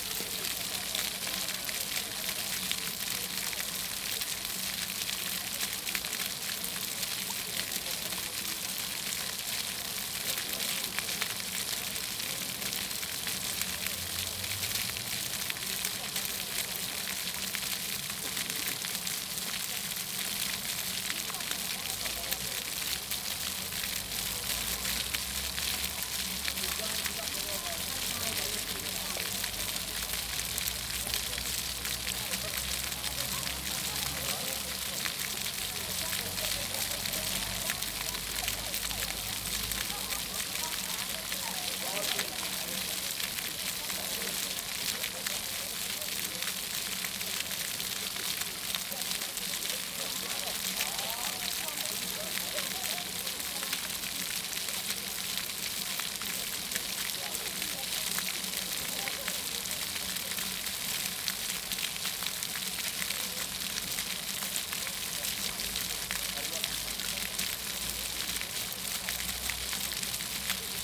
Park Stary im. Księcia Józefa Poniatowskiego, Białystok, Polska - fontanny-Teatr Dramatyczny
fontanny na Placu Józefa Piłsudskiego przed Teatrem Dramatycznym im. Aleksandra Węgierki
województwo podlaskie, Polska, European Union, 7 May